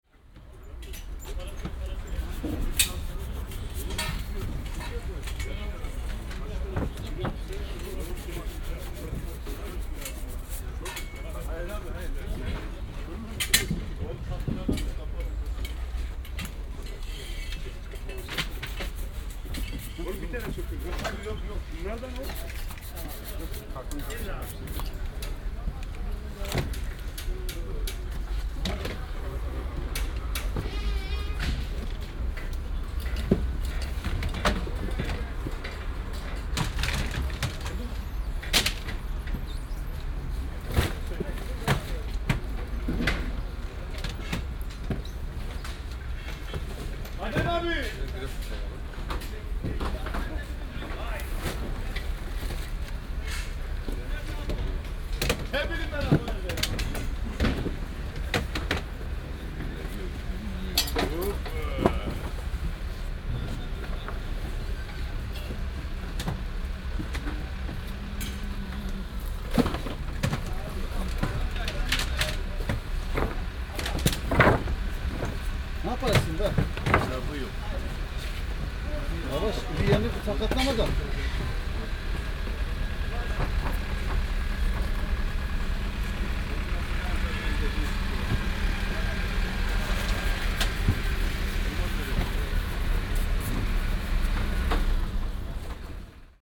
maybachufer, wochenmarkt, obststand - marktaufbau, obststand, mann putzt ananas
09.09.2008, 9:00
vor marktbeginn, obststand, mann bürstet ananas, aufbaugeräusche
before market opening, fruits stand, man brushes pineapples
Berlin, 9 September 2008, 09:00